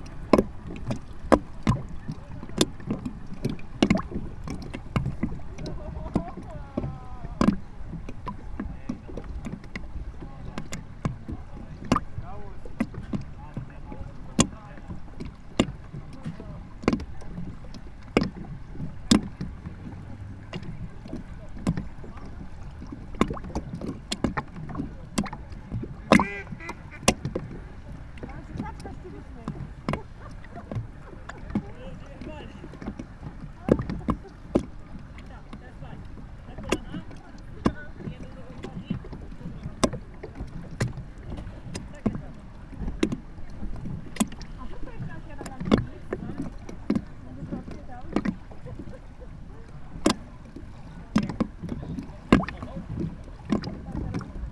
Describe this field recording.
water splashing in the depressions of a plastic platform, conversations of people spending time at the lake, duck calls. (roland r-07)